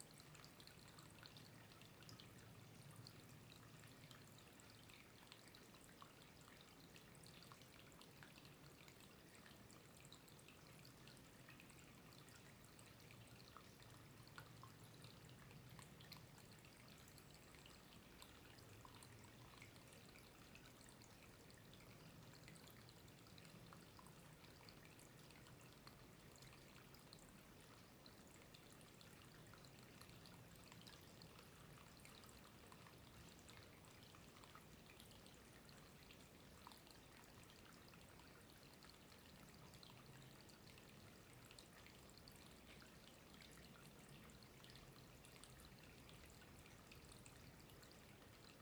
Unnamed Road, Pont-de-Montvert-Sud-Mont-Lozère, France - Sound Scape Forest runoff winter river
soundscape forest runoff small light winter river and wind in the summits
ORTF DPA 4022 + Rycotte + PSP3 AETA + edirol R4Pro